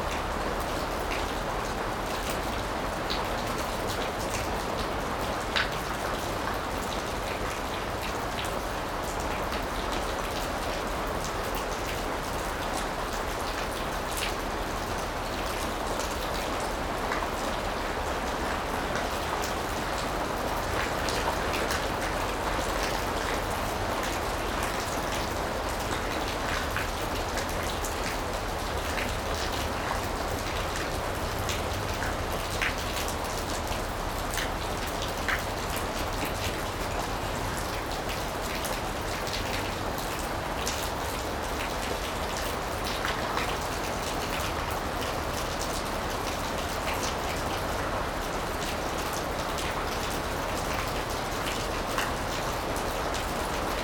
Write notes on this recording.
In a pasture, it's raining a lot. All is wet and we are waiting in a barn.